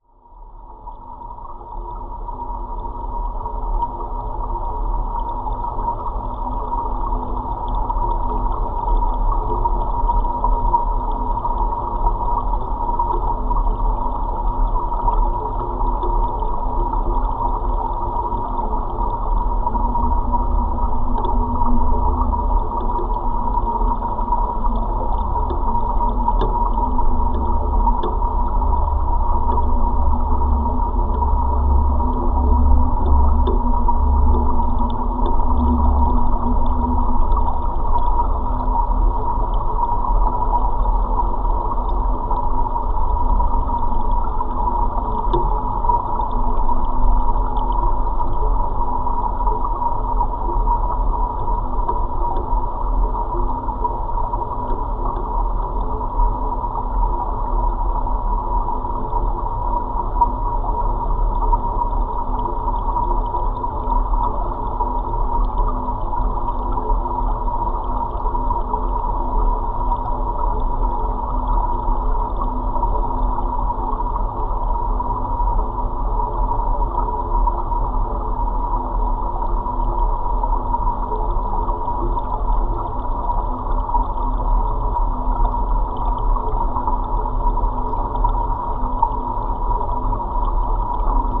Minčia, Lithuania, watermill vibrations
Roaring watermill whiter noise. Geophone on metallic construction od a dam.